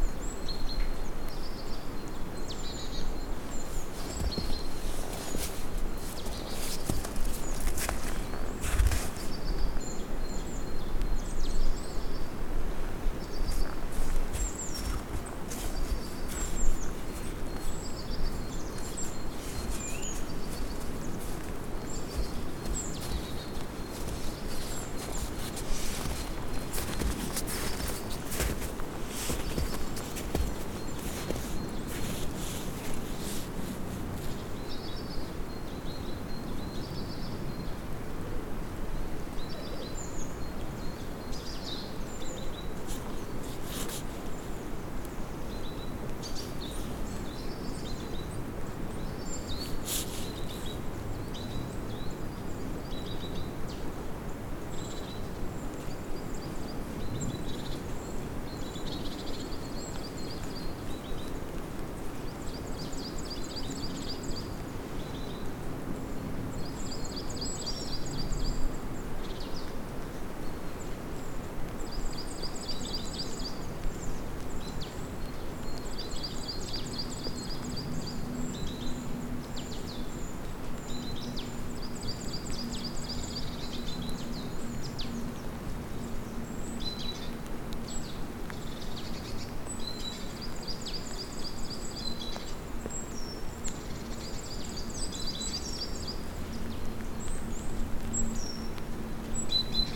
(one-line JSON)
{"title": "Mukinje, Plitvička Jezera, Croatia - I walk through the forest, footsteps in the snow, birds singing", "date": "2021-01-23 16:34:00", "description": "I walk through the forest, footsteps in the snow, birds singing", "latitude": "44.88", "longitude": "15.63", "altitude": "677", "timezone": "Europe/Zagreb"}